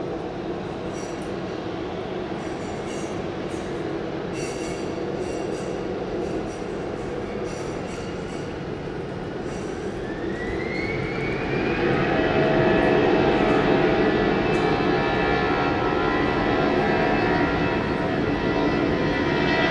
Barcelona, Terminal 1, Terrassa fumador, 11/09/2009
Terrace for smoker inside the new T1 terminal.